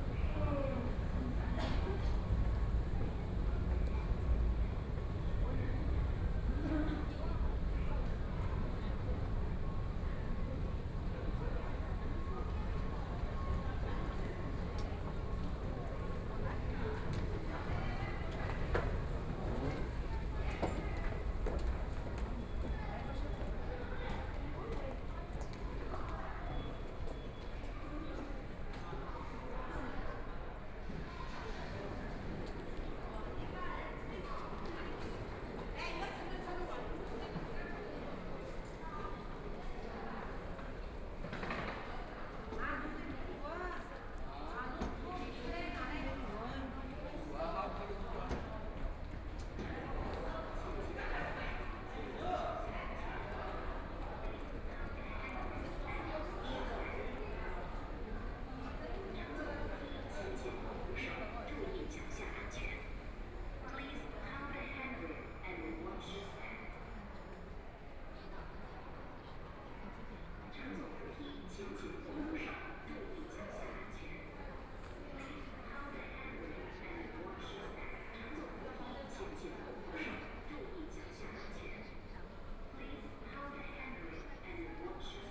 Laoximen Station, Shanghai - walking in the Station
walking in the Laoximen Station, Binaural recordings, Zoom H6+ Soundman OKM II
Huangpu, Shanghai, China, 28 November 2013, ~13:00